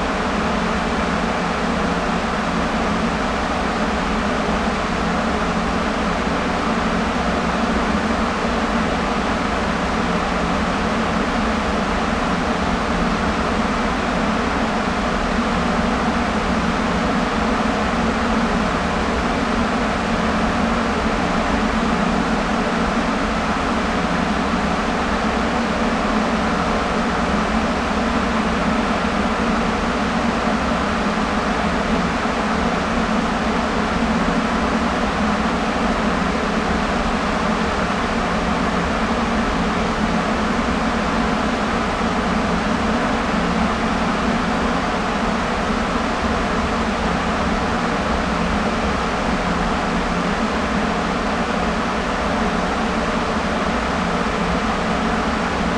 Unnamed Road, Malton, UK - corn dryer ...
corn dryer ... 30 year old machine ... SASS on tripod ...
20 August 2019, 13:50